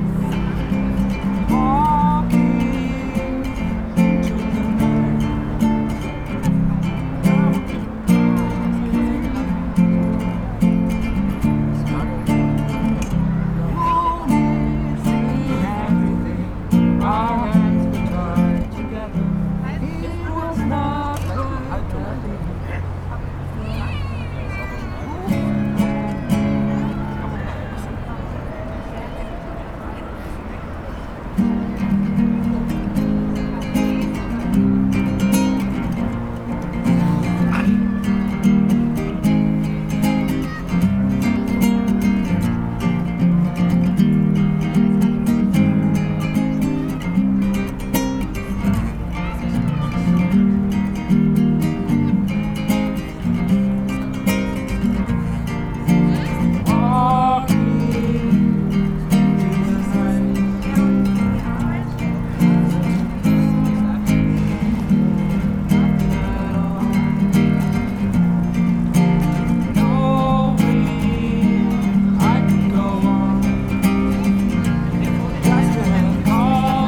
walther, park, vogel, weide, musik, gitarre, singende menschen, song: Good Old War - Not Quite Happiness, waltherpark, vogelweide, fm vogel, bird lab mapping waltherpark realities experiment III, soundscapes, wiese, parkfeelin, tyrol, austria, anpruggen, st.
Innsbruck, Austria